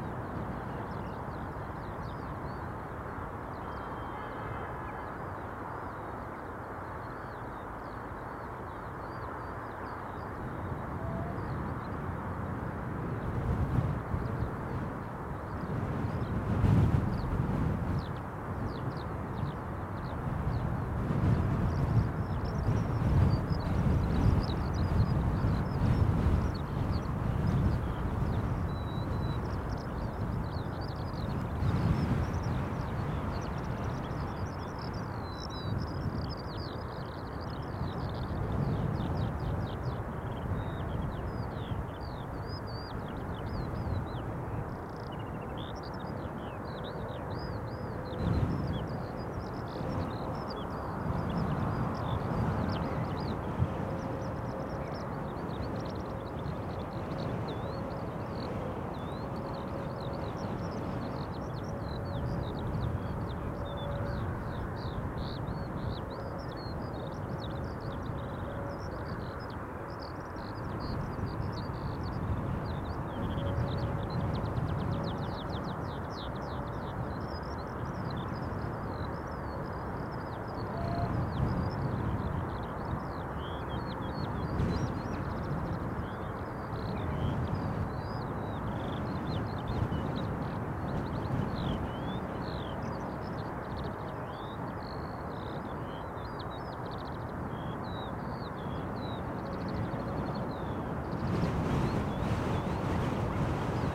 Contención Island Day 50 inner south - Walking to the sounds of Contención Island Day 50 Tuesday February 23rd
The Drive Moor Crescent Great North Road Grandstand Road
Wind
sound is tossed and shredded
by the gusts
the skylarks sings
above the gale
Jackdaws stay low
dogwalkers wrapped against the weather
England, United Kingdom, 23 February 2021, 10:50